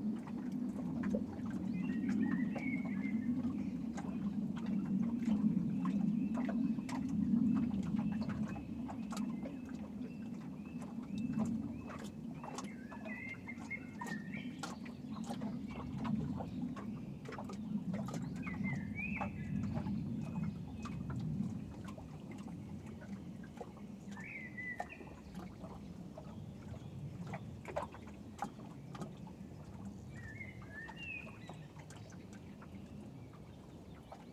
Antakalnis, Lithuania, on the bridge
small microphones hidden between the boards of the bridge - to hide from strong wind